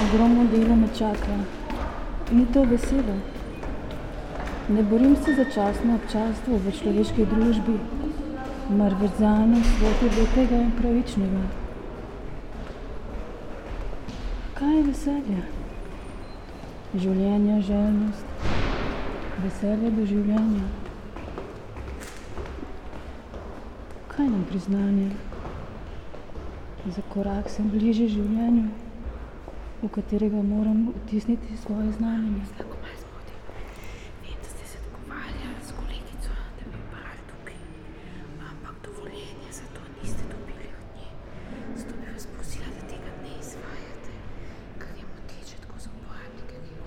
Narodna in Univerzitetna knjižnica, Ljubljana, Slovenia - Secret listening to Eurydice 6
first 6 min and 30 sec of one hour performance Secret listening to Eurydice 6, staircase of the entrance hall